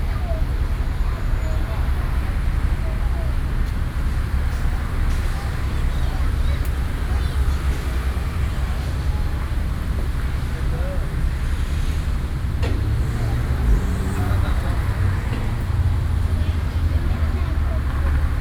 in the Park, Mother and child, Zoom H4n+ + Soundman OKM II
New Taipei City, Taiwan, 28 June 2012, ~16:00